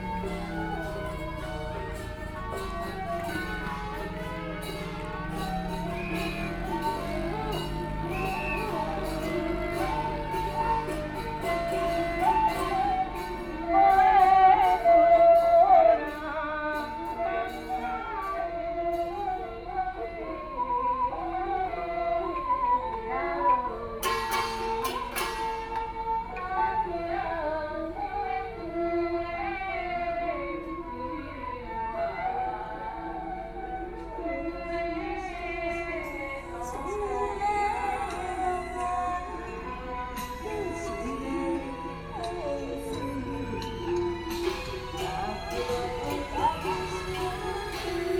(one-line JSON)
{"title": "壯圍鄉復興村, Yilan County - Funeral", "date": "2014-07-22 10:03:00", "description": "Funeral, Traffic Sound, At the roadside\nSony PCM D50+ Soundman OKM II", "latitude": "24.74", "longitude": "121.82", "altitude": "5", "timezone": "Asia/Taipei"}